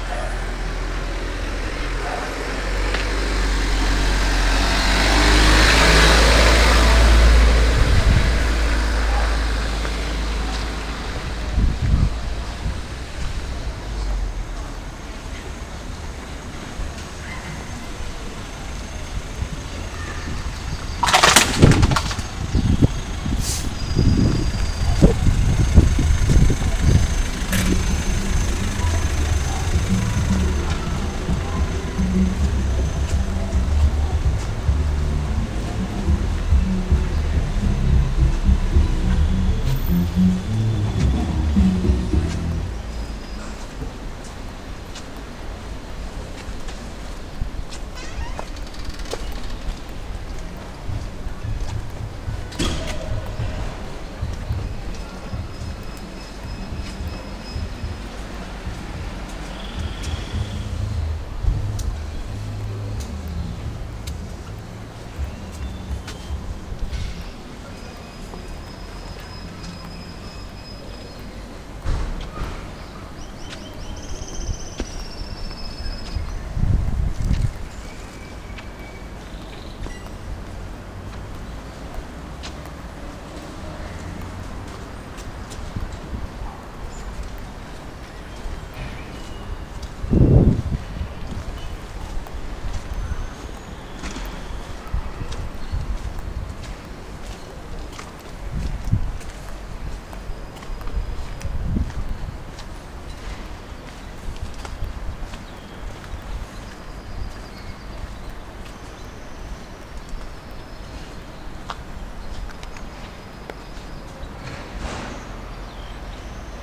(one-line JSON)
{"title": "Perchel Sur, Málaga, Málaga, Espanja - Asking the way, walking around", "date": "2007-12-23 17:01:00", "description": "Walking to the bus station in a peaceful area.", "latitude": "36.71", "longitude": "-4.43", "altitude": "11", "timezone": "Europe/Madrid"}